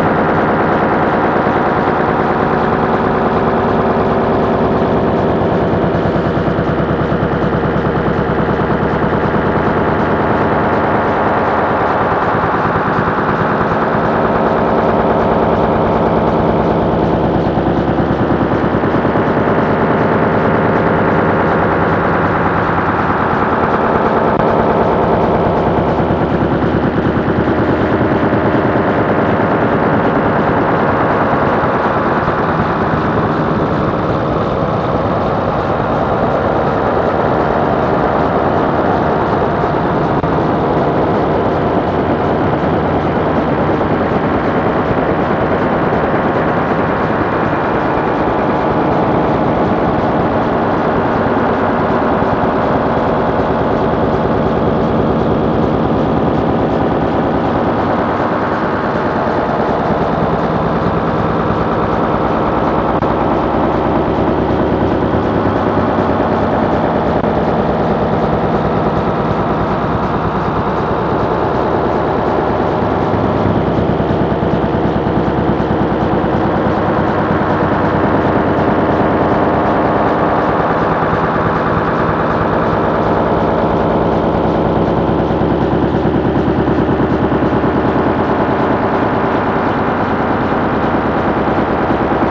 37 / Caterpilar / Toulouse - France
EREsecondERE/cortex- Mere/Dans la zone de lHippocampe_TTM2LMR-reflect_installation 2010
1 August 2010